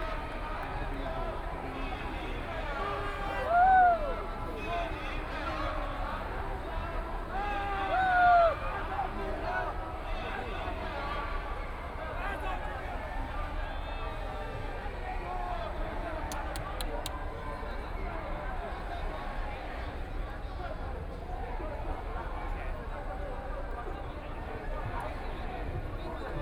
Zhong Xiao West Road, Taipei City - No-nuke Movement
No-nuke Movement occupy Zhong Xiao W. Rd.
Sony PCM D50+ Soundman OKM II
27 April, ~16:00